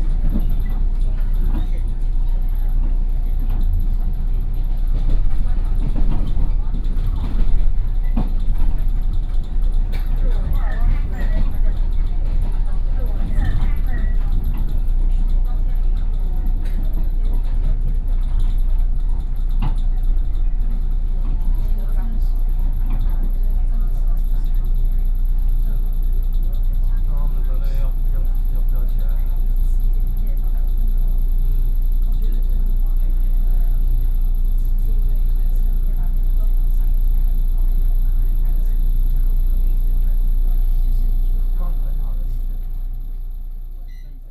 Shulin Dist., New Taipei City, Taiwan - In railcar
from Fuzhou Station to Shulin Station, In railcar
Binaural recordings
Sony PCM D50 + Soundman OKM II